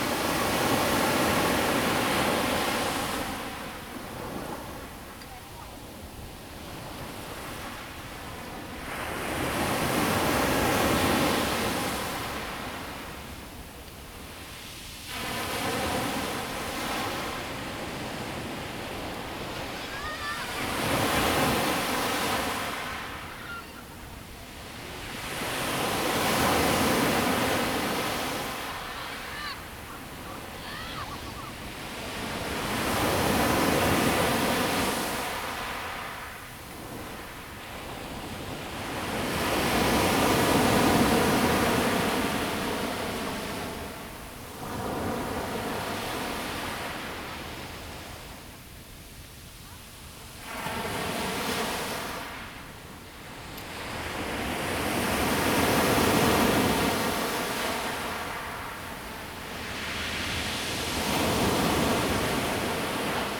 Qixingtan Beach, Hualien County - sound of the waves
sound of the waves
Zoom H2n MS+XY +Sptial Audio
19 July, 10:35